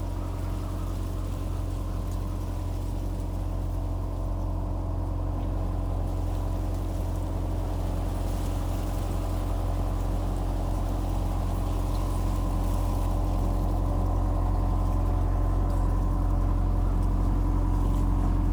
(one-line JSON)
{"title": "Kings, Subd. B, NS, Canada - Wind in maize, crows and a long approaching tractor", "date": "2015-10-15 13:39:00", "description": "Wind blows though a field of maize waiting to be harvested. The cobs are heavy. Crows call. Passing vehicles are separate events here and there is time to hear the tractor droning up the hill. As it crests the angle to its trailer changes and allows more freedom for clanking and banging.", "latitude": "45.23", "longitude": "-64.36", "altitude": "34", "timezone": "America/Halifax"}